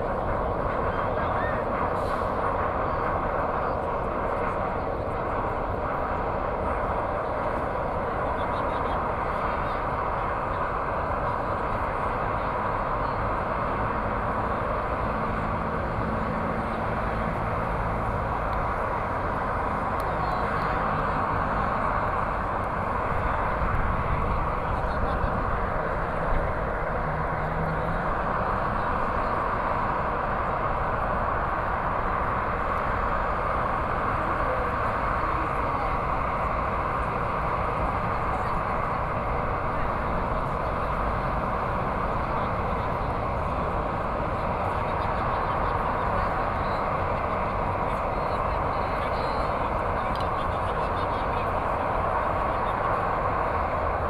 THE GRAND GREEN, Taipei - Laughter
Electronic music performances with the crowd, Traffic Noise, S ony PCM D50 + Soundman OKM II